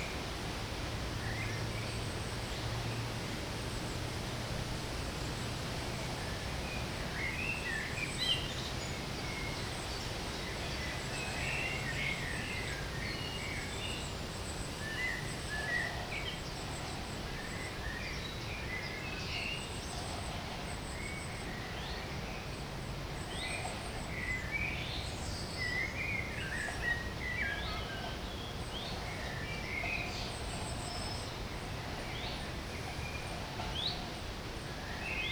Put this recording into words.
A hidden place, An island in the river, the city is all around, still, the river is listening, to what is thrown into it, to people long ago, and far away, to the one, who came, to listen alongside, even, to you